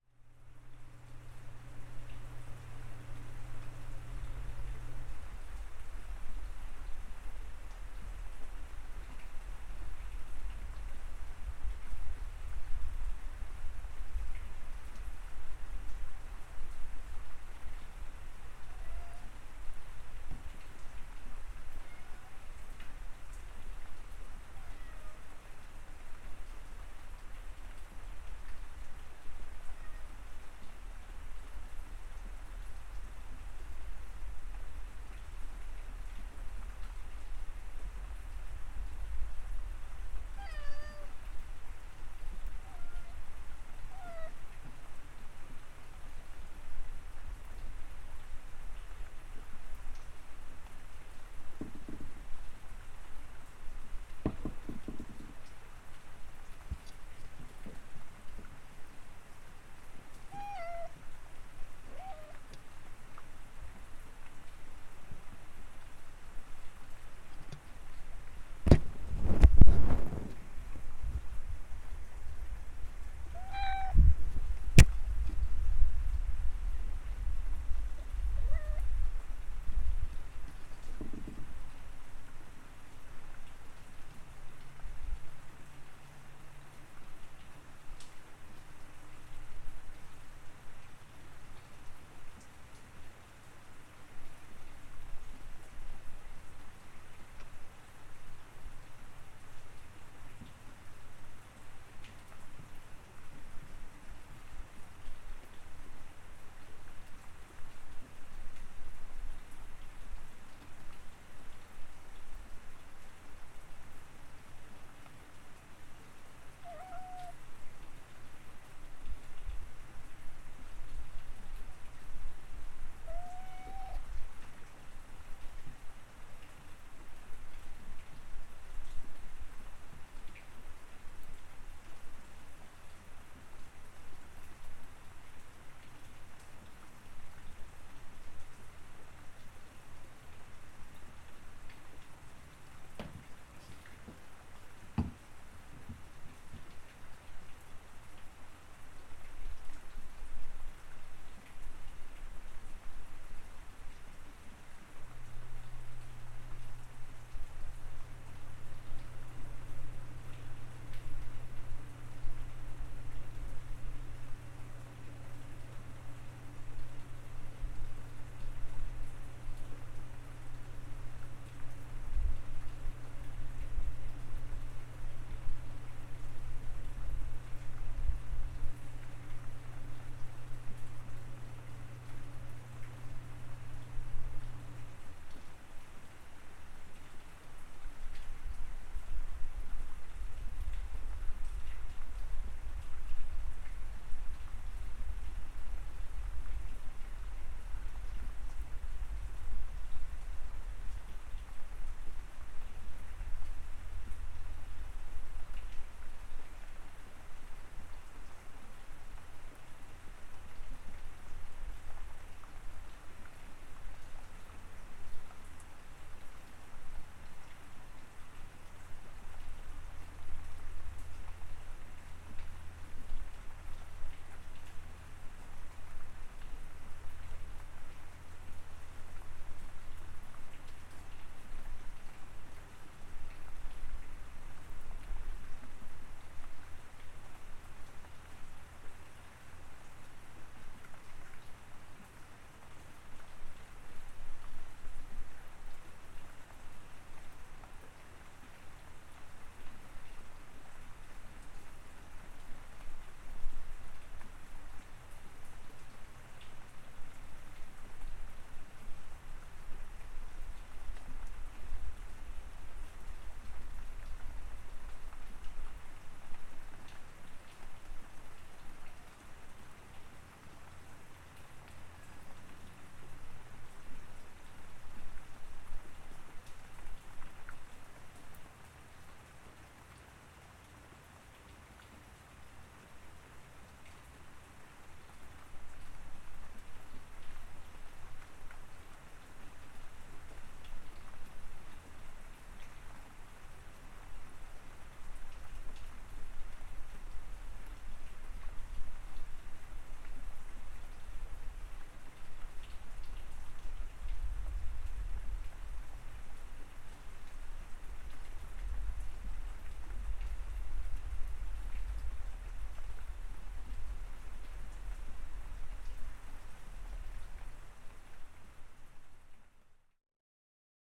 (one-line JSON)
{"title": "Pl SE, Bothell, WA, USA - The night shift in a residence under lockdown", "date": "2020-05-21 03:40:00", "description": "The sounds of a nocturnal office job while under quarantine in suburban Washington, featuring a heavy rainstorm and a curious cat. Computer machinery, rain, cat noises. Recorded on a Tascam DR-40X.", "latitude": "47.78", "longitude": "-122.20", "altitude": "115", "timezone": "America/Los_Angeles"}